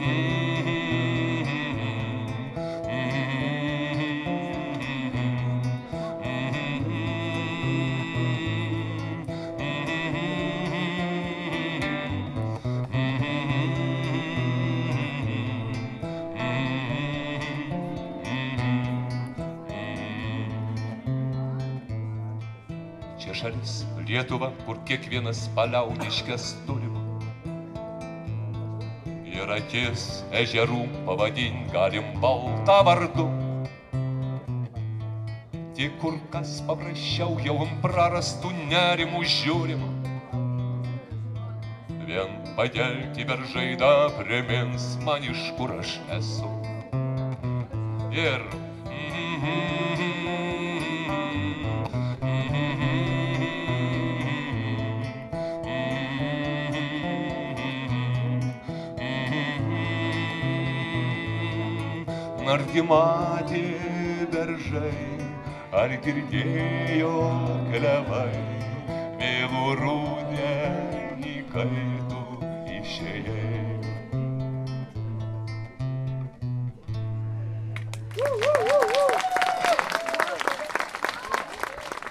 Lithuania, Pagulbis, evening music
lithuanian bard Algis Svidinskas